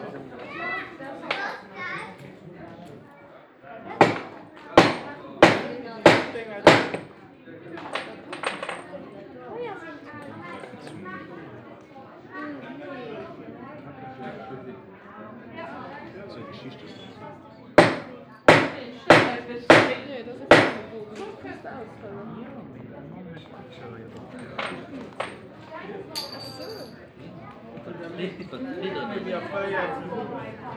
Hosingen, Luxemburg - Hosingen, nature park house, summer fair, forging stand
Auf dem Sommer-Familienfest des Naturpark Hauses an einem Schmiedestand. Der Klang des Metallhämmerns und diversen Stimmen.
At the summer family fair of the nature park house inmside a blacksmith tent The sound of forging small metal plates and several voices.